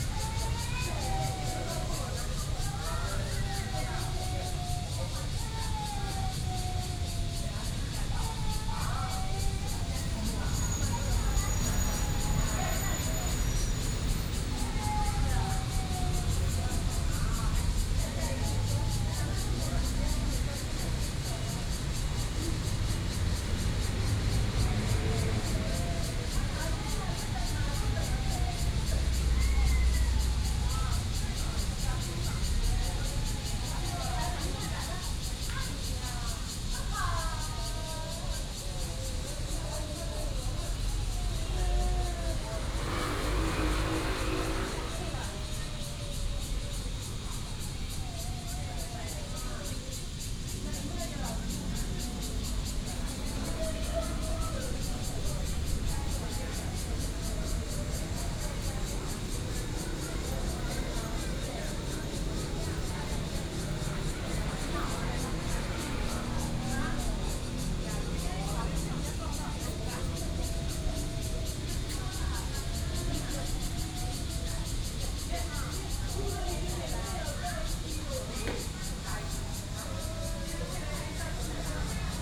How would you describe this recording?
In the next coffee shop, Traffic Sound, Sitting below the track, MRT train passes, Cicadas sound, Sony PCM D50+ Soundman OKM II